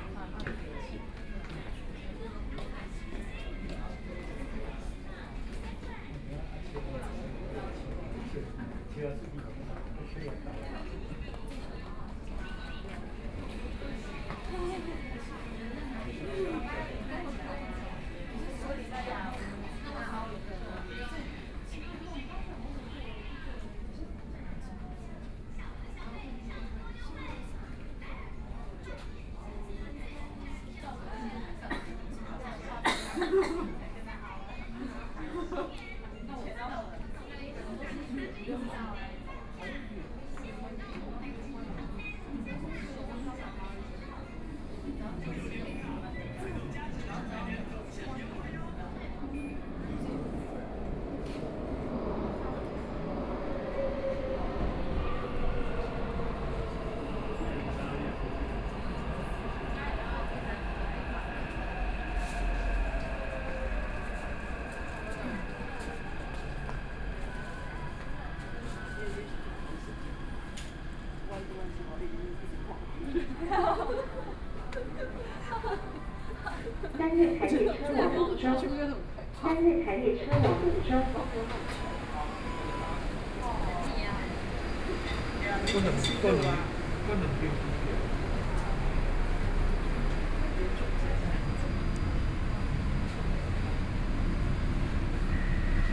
Minquan West Road Station - at the platform
Waiting for the train arrived at the platform, Binaural recordings, Sony PCM D50 + Soundman OKM II
Taipei City, Taiwan